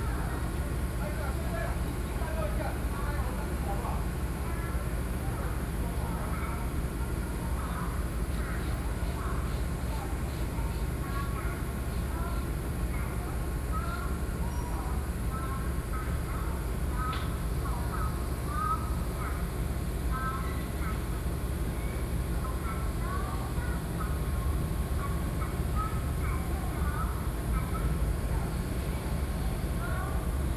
Gongliao Station, 貢寮區貢寮里 New Taipei City - In the station platform
In the station platform
Rode NT4+Zoom H4n